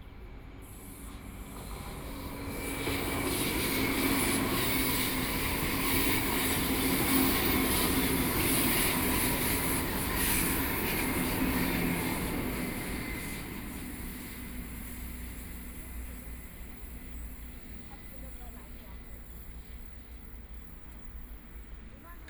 淡水區竿蓁里, New Taipei City - soundwalk
Walking along the track beside the MRT, Take a walk, Bicycle voice, MRT trains
Please turn up the volume a little. Binaural recordings, Sony PCM D100+ Soundman OKM II
New Taipei City, Taiwan, 5 April 2014